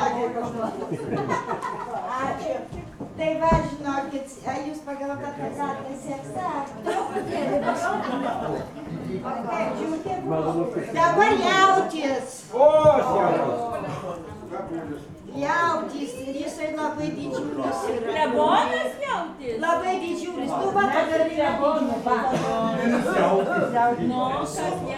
Lithuania, Sudeikiai, drunken christmas people
some drunken santa with drunken hare in drunken crowd